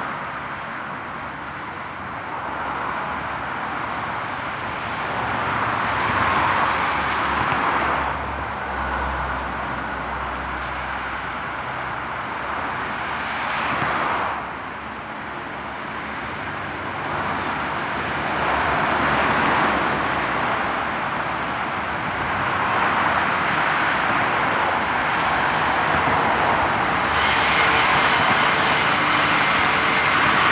Poznan, overpass at Witosa freeway - above freeway
as usual heavy traffic on the freeway in both directions. all sort of sounds of passing cars. tires roar on the tarmac.